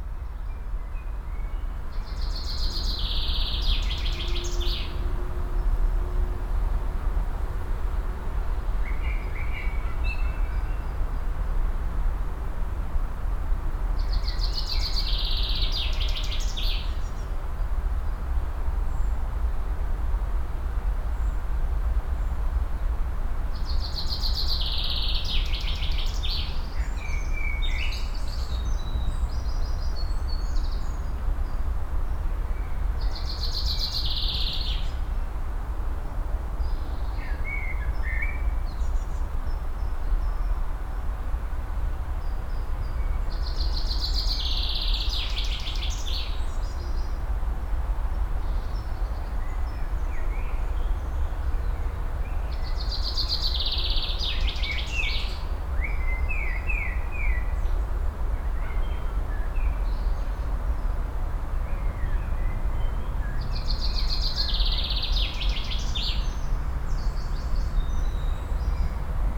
cologne, merheim, merheimer heide, forrest and plane
soundmap nrw: social ambiences/ listen to the people in & outdoor topographic field recordings